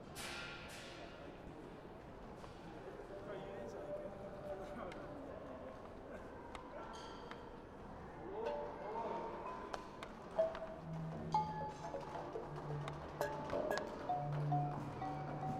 Westend-Süd, Frankfurt, Germany - Musikmesse 2012 - Music4Kids